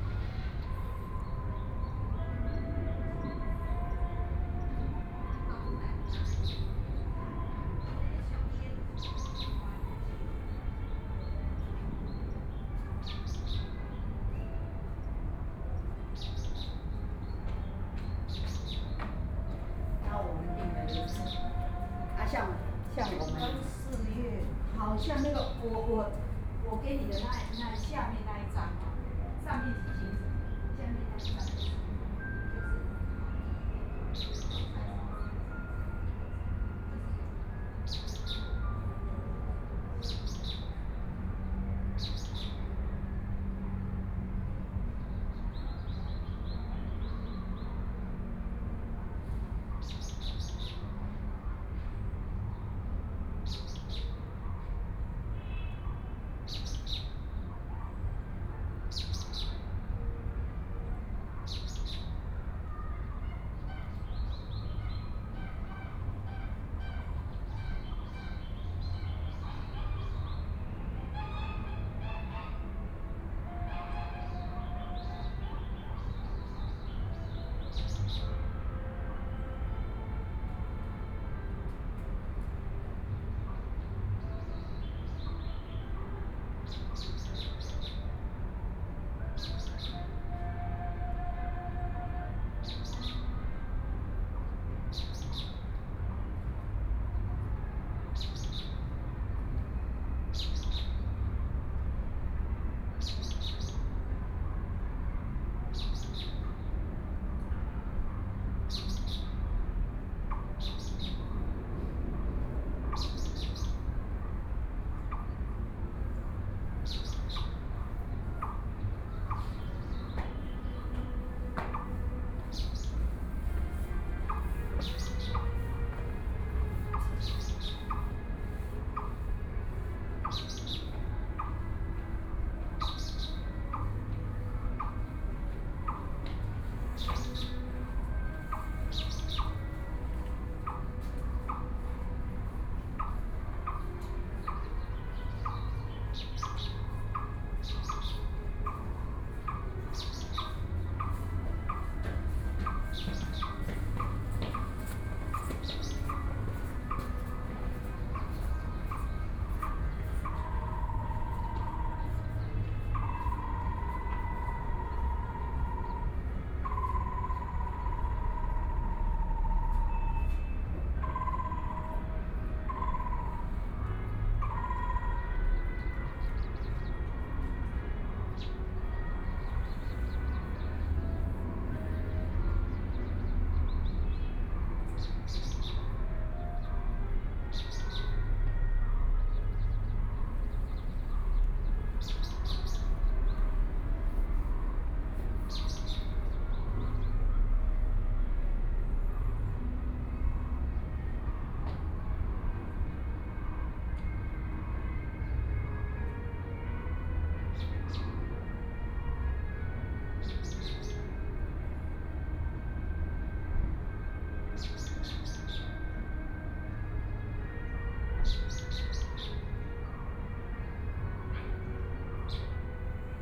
Sitting in the park, Birdsong, Insects sound, Frogs sound, Aircraft flying through
Binaural recordings